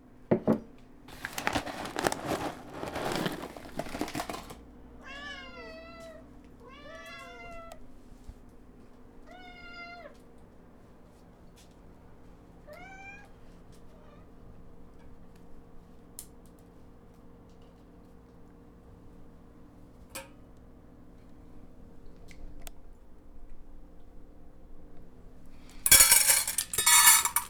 Goss - Grove, Boulder, CO, USA - Dinner Time